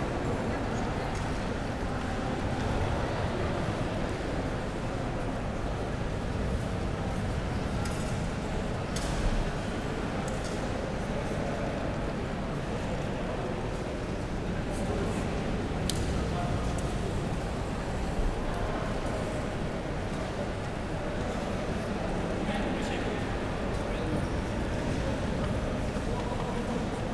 Hbf Bremen - main station, hall ambience
Bremen main stattion, great hall ambience on a Sunday evening
(Sony PCM D50, DPA4060)
Bremen, Germany, 14 September